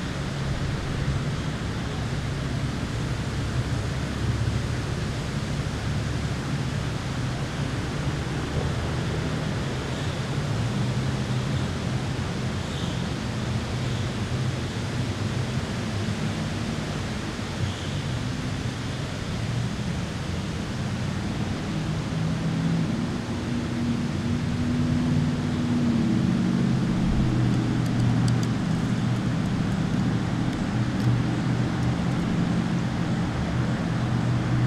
Hicks Rd, Marietta, GA, USA - Windy Spring Day At Milford Park
A windy day at Milford Park off of Hicks Road. There was hardly anyone there, so the majority of the sounds come from the wind in the trees and human activity from the surrounding area. Birds can also be heard. This is an intact section of the full recording, which suffered from microphone overload due to strong wind gusts. This audio was captured from the top of the car.
[Tascam Dr-100mkiii & Primo EM-272 omni mics]
25 April, ~16:00